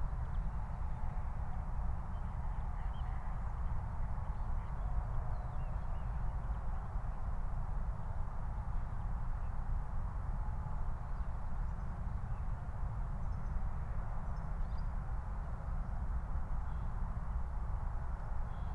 Moorlinse, Berlin Buch - near the pond, ambience
09:19 Moorlinse, Berlin Buch